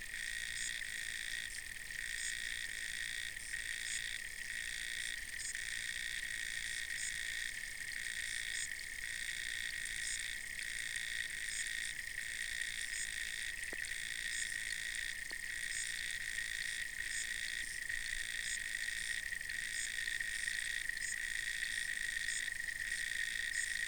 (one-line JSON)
{"title": "underwater density, Leliūnų sen., Lithuania", "date": "2016-09-05 11:40:00", "description": "autumnal underwater sounds", "latitude": "55.43", "longitude": "25.51", "timezone": "Europe/Vilnius"}